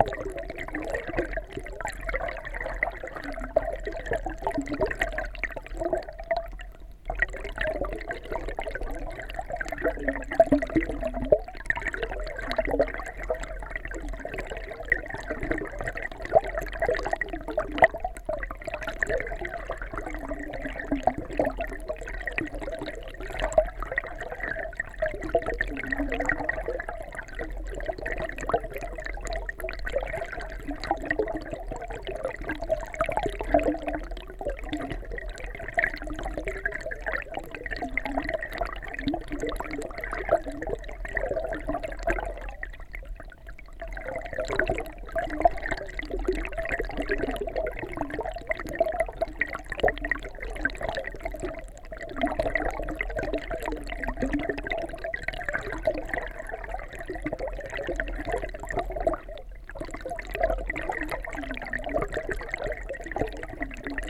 Handmade "stick" contact microphone on the wooden remains of abandoned watermill
Utenos rajono savivaldybė, Utenos apskritis, Lietuva, 5 July 2022, 15:05